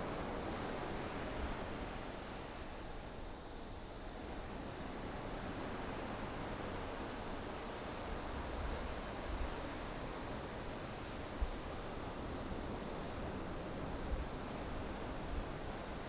壯圍鄉東港村, Yilan County - In the beach
In the beach, Sound of the waves
Zoom H6 MS+ Rode NT4
Yilan County, Taiwan, 26 July 2014